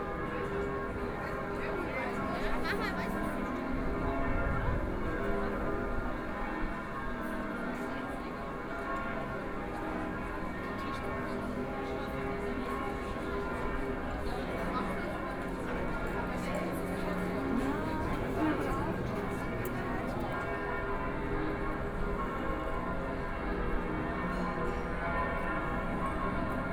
{"title": "Schafflerstraße, Munich 德國 - Church bells", "date": "2014-05-11 11:48:00", "description": "Church bells, Walking in the streets", "latitude": "48.14", "longitude": "11.57", "altitude": "527", "timezone": "Europe/Berlin"}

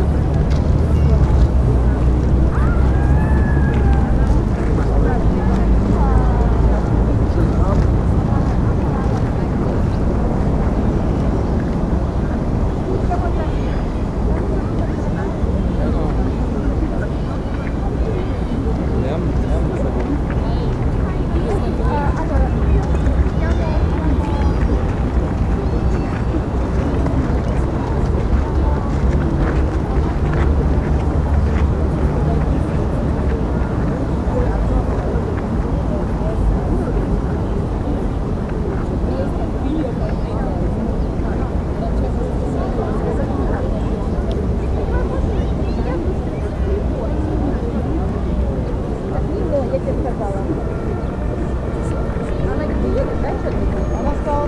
{"title": "berlin, preußenpark: geodätischer referenzpunkt - the city, the country & me: geodetic reference point", "date": "2013-08-18 14:56:00", "description": "geodetic reference point during the thai market where the thai community sells thai food on saturday and sunday afternoons\nthe city, the country & me: august 18, 2013", "latitude": "52.49", "longitude": "13.31", "altitude": "41", "timezone": "Europe/Berlin"}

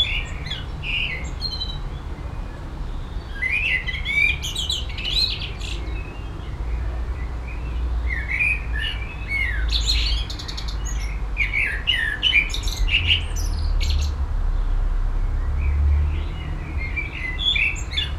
{"title": "Düsseldorf, saarwerden street, garden - düsseldorf, saarwerden street, garden", "date": "2011-05-03 13:52:00", "description": "inside a back house garden in the warm, mellow windy evening. a blackbird singing in the early spring.\nsoundmap nrw - social ambiences and topographic field recordings", "latitude": "51.24", "longitude": "6.74", "altitude": "38", "timezone": "Europe/Berlin"}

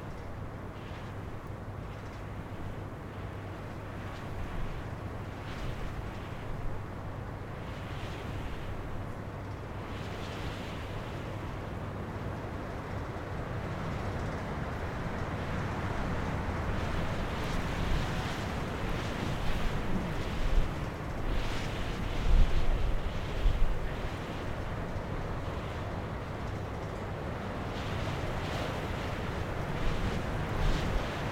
{"title": "Cerro Sombrero, Primavera, Magallanes y la Antártica Chilena, Chile - storm log - cerro sombrero hilltop", "date": "2021-02-15 13:11:00", "description": "hilltop, wind SW 38 km/h, ZOOM F1, XYH-6 cap\nCerro Sombrero was founded in 1958 as a residential and services centre for the national Petroleum Company (ENAP) in Tierra del Fuego.", "latitude": "-52.78", "longitude": "-69.29", "altitude": "70", "timezone": "America/Punta_Arenas"}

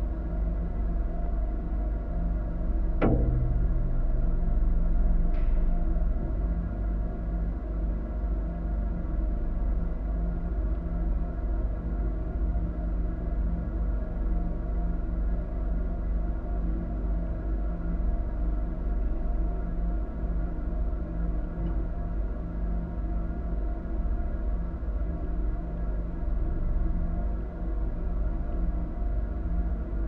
{"title": "Radiator in the Associate Space", "latitude": "51.45", "longitude": "-2.61", "altitude": "8", "timezone": "GMT+1"}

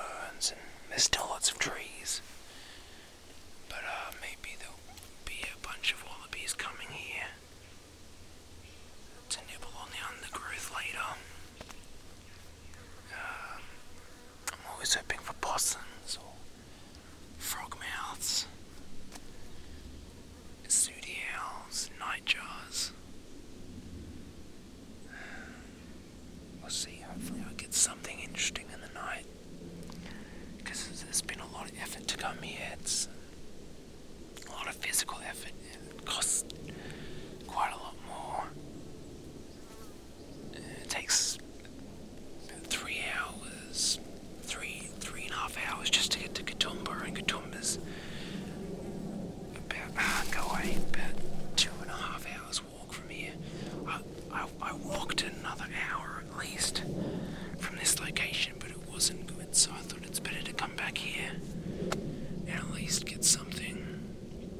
{"title": "Blue Mountains National Park, NSW, Australia - Leaving my microphones in the Jamison Valley (Early Autumn)", "date": "2015-03-08 18:20:00", "description": "The first 40 minutes or so of a 12 and a half hour recording in the Jamison Valley. It only got to around 13C in the night so I was wrong about the temperature. And the valley was full of thick mist from around 9pm to 7am so I don't think the (almost) full moon would of made much of a difference.\nAlso, I did actually record Wallabies munching on the undergrowth, no squeaking trees and Tawny Frogmouth's at the same time though!\nRecorded with a pair of AT4022's into a Tascam DR-680.", "latitude": "-33.77", "longitude": "150.30", "altitude": "709", "timezone": "Australia/Sydney"}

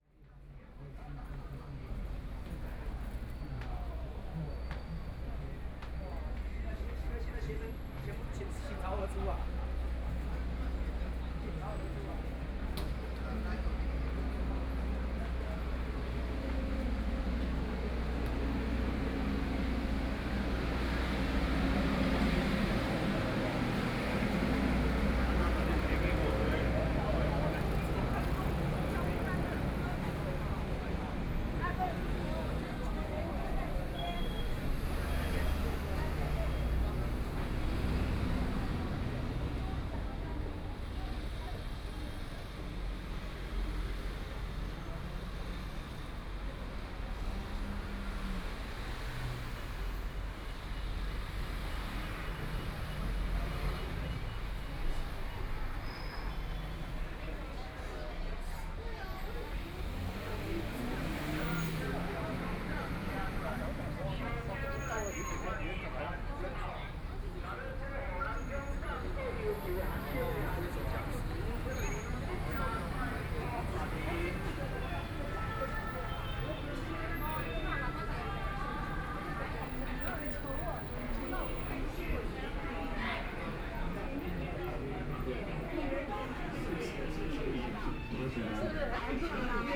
{"title": "中正區幸福里, Taipei City - soundwalk", "date": "2014-04-01 16:30:00", "description": "Walking around the protest area, from Qingdao E. Rd, Linsen S. Rd.Zhongxiao E. Rd.", "latitude": "25.04", "longitude": "121.52", "altitude": "15", "timezone": "Asia/Taipei"}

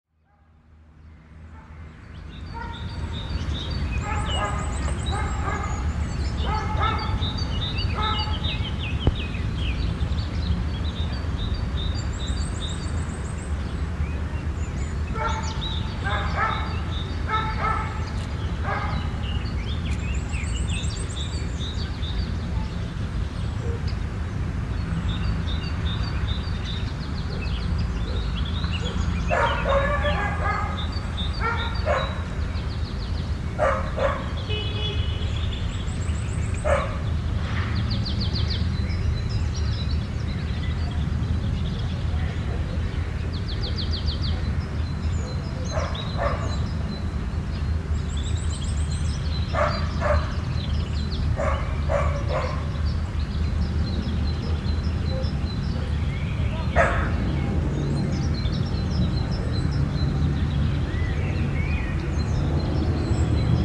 {"title": "Arona, dogs, birds, cars and plane", "description": "Arona, Italy, 22 March 2010. Couple of dogs are barking, several birds singing in background.", "latitude": "45.76", "longitude": "8.55", "altitude": "261", "timezone": "Europe/Berlin"}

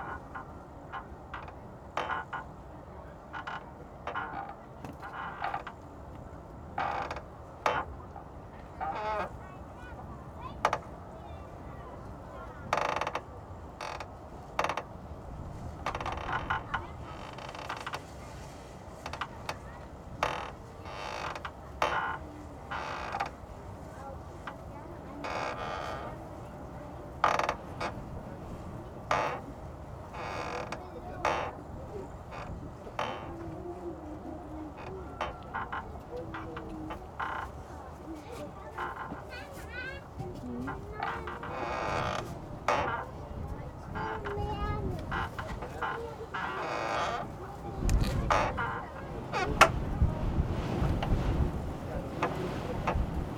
{"title": "Allmende, Tempelhofer Feld, Berlin - wooden box, squeaking door", "date": "2013-12-27 12:55:00", "description": "urban gardening area on the former Tempelhof airfield. microphone in a wooden box, squeaking door\n(PCM D50)", "latitude": "52.47", "longitude": "13.42", "altitude": "53", "timezone": "Europe/Berlin"}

